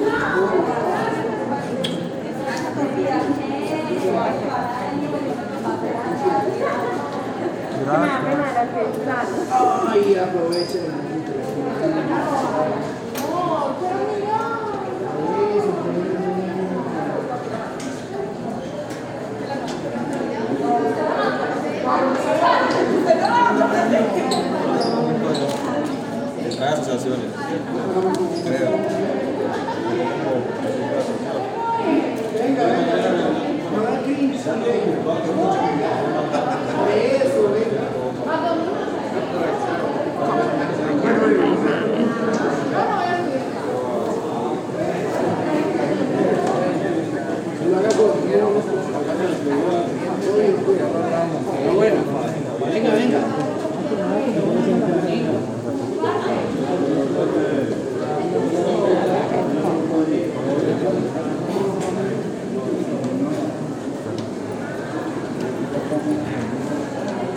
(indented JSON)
{
  "title": "Cra., Medellín, Antioquia, Colombia - Sonido ambiente del piso 1 del bloque 15 a las 9:56am",
  "date": "2021-09-27 09:55:00",
  "description": "Sonido ambiente en el piso 1 del bloque 15 de la Universidad de Medellín en la Facultad de derecho, se escuchan voces, pasos, golpes en barandas metálicas. También se escuchaban los estudiantes dirigiéndose a sus salones para las clases de las 10 am.\nCoordenadas: 6°13'56.1\"N+75°36'37.0\"W\nSonido tónico: voces hablando, pasos.\nSeñales sonoras: chillido de los zapatos, golpes en barandas metalica.\nGrabado a la altura de 1.60 metros\nTiempo de audio: 3 minutos con 49 segundos.\nGrabado por Stiven López, Isabel Mendoza, Juan José González y Manuela Gallego con micrófono de celular estéreo.",
  "latitude": "6.23",
  "longitude": "-75.61",
  "altitude": "1566",
  "timezone": "America/Bogota"
}